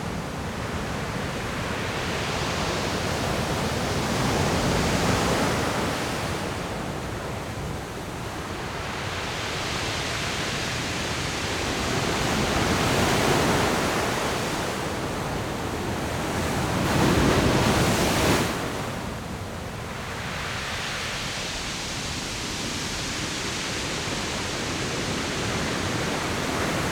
Sound of the waves
Zoom H6 +Rode NT4